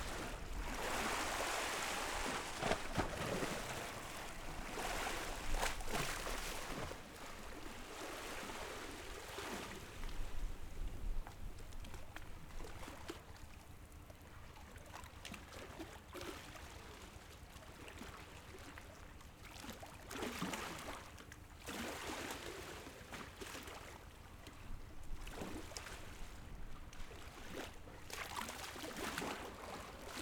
白沙港, Beigan Township - In the dock
In the dock, Windy, Tide
Zoom H6 XY